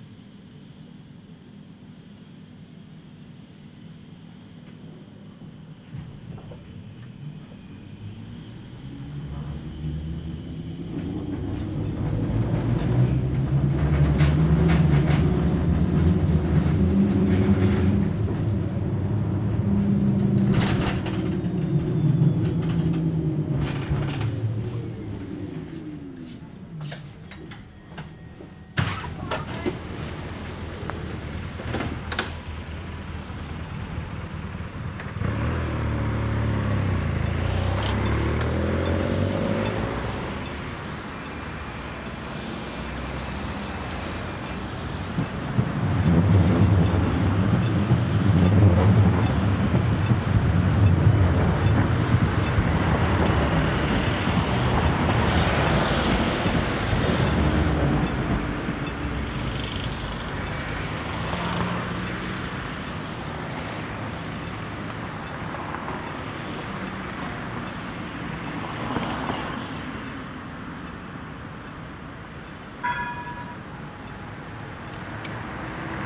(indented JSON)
{
  "title": "Boulevard Général Jacques, Ixelles, Belgique - Tram 7 and road ambience",
  "date": "2022-05-04 10:00:00",
  "description": "Getting out of the tram, then cars and trucks.\nTech Note : Ambeo Smart Headset binaural → iPhone, listen with headphones.",
  "latitude": "50.82",
  "longitude": "4.39",
  "altitude": "83",
  "timezone": "Europe/Brussels"
}